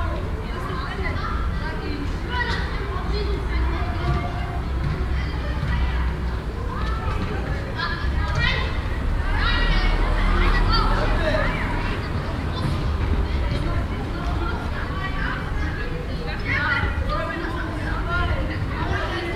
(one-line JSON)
{"title": "Ostviertel, Essen, Deutschland - essen, frida levy school yard", "date": "2014-04-09 10:30:00", "description": "At the school yard of the Frida-Levy school. The sound of the schoool bell and the voices of the pupils entering the school yard.\nAn der Frida Levy Gesamtschule. Der Klang der Pausenglocke und die Stimmen von Schulkindern auf dem Schulhof.\nProjekt - Stadtklang//: Hörorte - topographic field recordings and social ambiences", "latitude": "51.46", "longitude": "7.02", "altitude": "80", "timezone": "Europe/Berlin"}